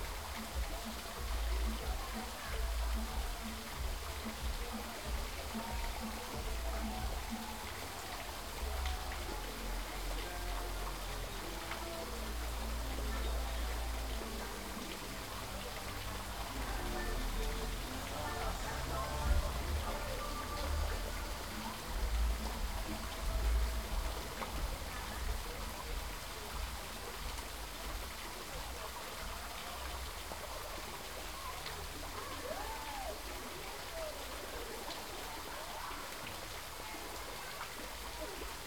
Gáldar, Gran Canaria, at the fountain